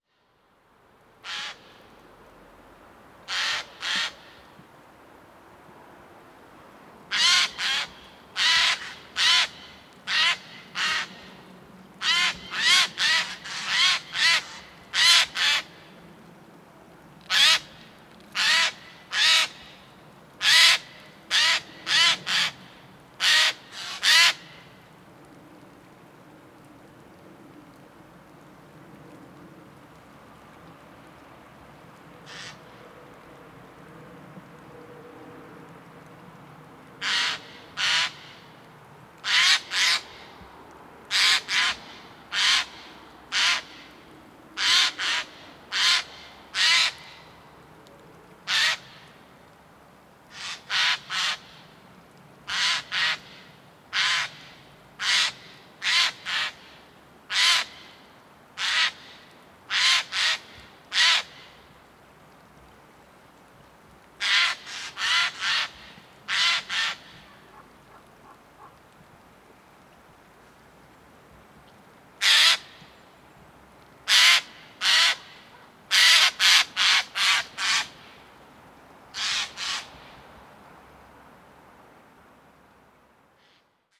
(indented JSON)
{
  "title": "Lac de Robertville, Belgique, Waimes, Belgique - Eurasian Jay near the lake",
  "date": "2022-01-06 16:00:00",
  "description": "Screaming and echoing.\nGeai des chênes\nGarrulus glandarius\nTech Note : Sony PCM-D100 internal microphones, wide position.",
  "latitude": "50.45",
  "longitude": "6.11",
  "altitude": "507",
  "timezone": "Europe/Brussels"
}